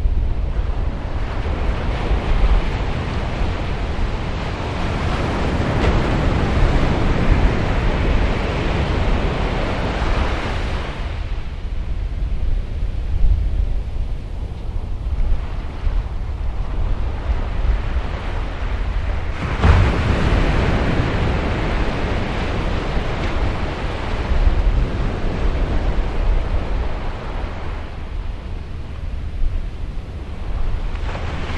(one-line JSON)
{"title": "Silver Strand Coronado, CA, USA - Glassy Winter Surf, Silver Strand", "date": "2012-11-30 12:30:00", "description": "Chest high surf, no wind.", "latitude": "32.63", "longitude": "-117.14", "altitude": "2", "timezone": "America/Los_Angeles"}